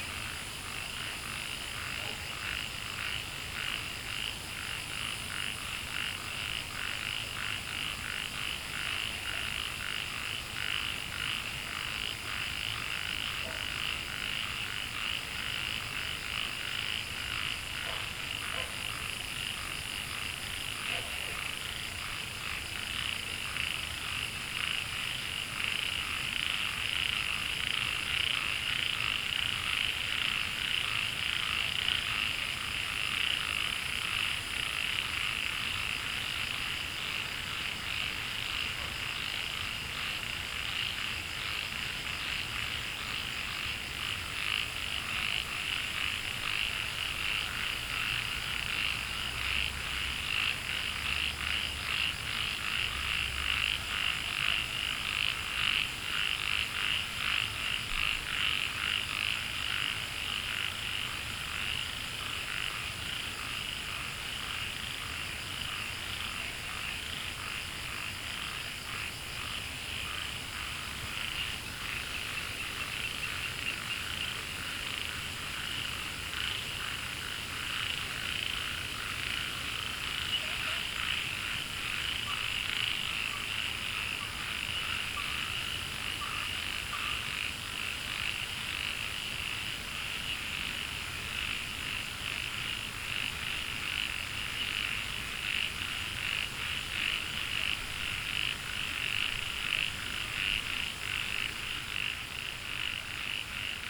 茅埔坑溪生態公園, 桃米里, Puli Township - Wetland Park
Wetland Park, Frogs chirping, Brook, Dogs barking
10 August 2015, ~20:00